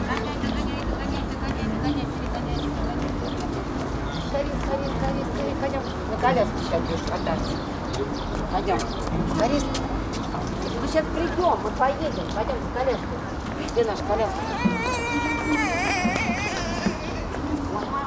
MIC Cathedral yard, A BOY PLAING GUITAR
Moscow Immaculate Conception Catholic Cathedral yard, A boy plaing guitar, Family Day
28 May 2011, 19:45